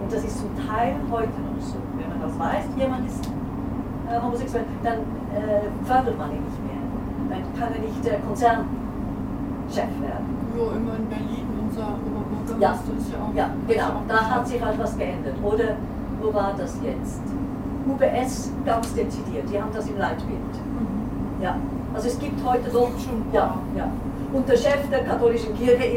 {
  "title": "zurich, inside train, weird speech",
  "description": "woman holding a strange speech to nobody in particular. inside train restaurant, train zurich - zurich airport. recorded june 15, 2008. - project: \"hasenbrot - a private sound diary\"",
  "latitude": "47.38",
  "longitude": "8.53",
  "altitude": "406",
  "timezone": "GMT+1"
}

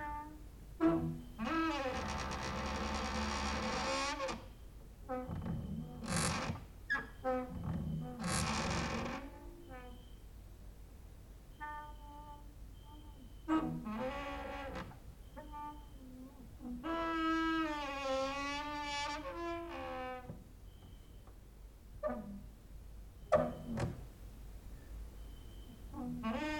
{
  "title": "Mladinska, Maribor, Slovenia - late night creaky lullaby for cricket/12",
  "date": "2012-08-19 23:30:00",
  "description": "cricket outside, exercising creaking with wooden doors inside",
  "latitude": "46.56",
  "longitude": "15.65",
  "altitude": "285",
  "timezone": "Europe/Ljubljana"
}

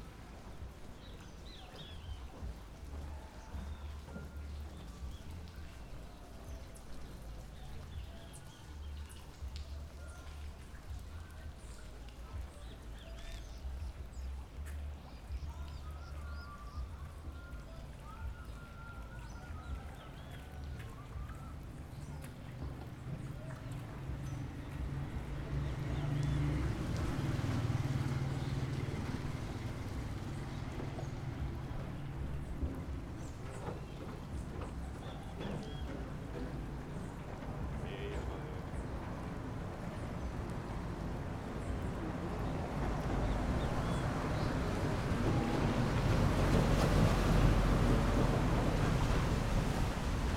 {
  "title": "São Félix, Bahia, Brazil - De baixo da Ponte Dom Pedro I",
  "date": "2014-03-15 05:30:00",
  "description": "Gravei este áudio numa manhã embaixo da ponte de metal que liga Cachoeira a São Félix, capitação entre a ponte e o rio.\nGravado com o gravador Tascam D40\npor Ulisses Arthur\nAtividade da disciplina de Sonorização, ministrada pela professora Marina Mapurunga, do curso de cinema e audiovisual da Universidade Federal do Recôncavo da Bahia (UFRB).",
  "latitude": "-12.61",
  "longitude": "-38.97",
  "altitude": "4",
  "timezone": "America/Bahia"
}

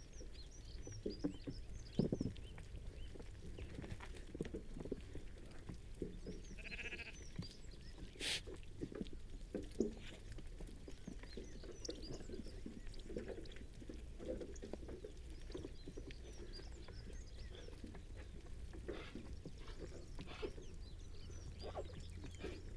{
  "title": "Luttons, UK - Not many baas at breakfast ...",
  "date": "2017-03-12 07:49:00",
  "description": "Not many baas at breakfast ... sheep flock feeding from troughs ... some coughing and snorts from the animals ... occasional bleats towards end ... recorded using a parabolic ... bird calls from ... pied wagtail ... blue tit ... tree sparrow ... Skylark ... rook ... crow ... meadow pipit ... pheasant ... song thrush ... yellowhammer ...",
  "latitude": "54.12",
  "longitude": "-0.56",
  "altitude": "100",
  "timezone": "Europe/London"
}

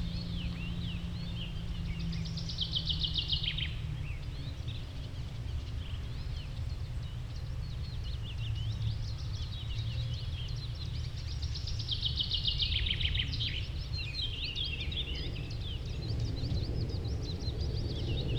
{
  "title": "Westlicher Düppeler Forst, Germany - nachtigall und andere voegel",
  "date": "2015-05-02 15:22:00",
  "description": "a nightingale and other birds at the promenade",
  "latitude": "52.44",
  "longitude": "13.15",
  "altitude": "34",
  "timezone": "Europe/Berlin"
}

